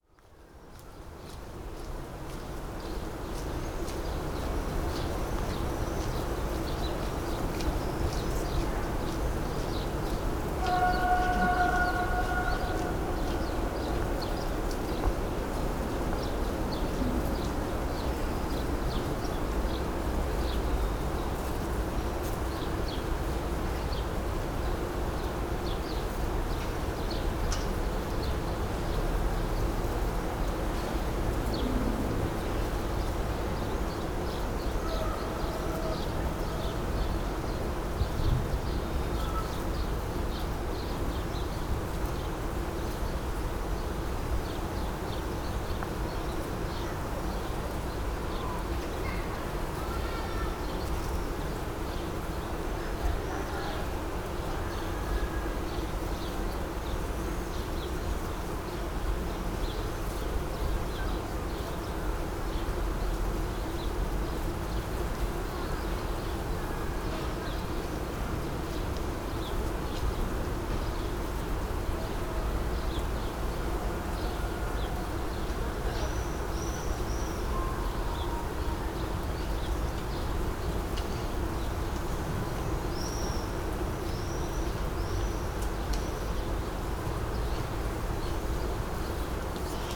a linden tree with a swarm of bees and bumblebees buzzing and collecting nectar.